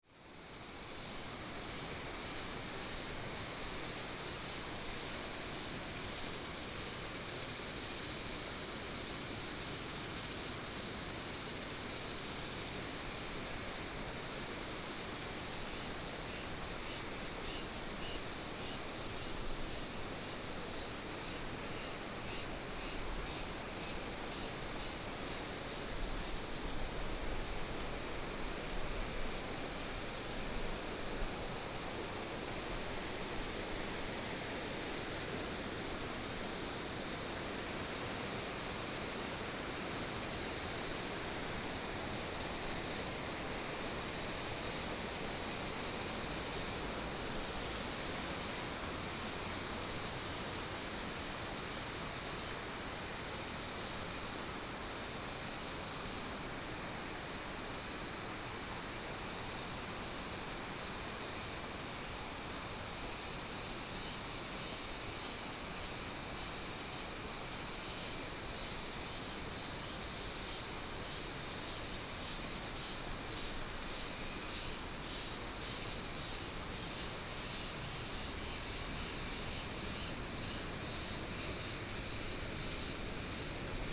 蓬萊溪生態園區, Nanzhuang Township - Ecological protection area
Ecological protection area, Cicadas, Insects, The sound of birds, Traffic sound, Binaural recordings, Sony PCM D100+ Soundman OKM II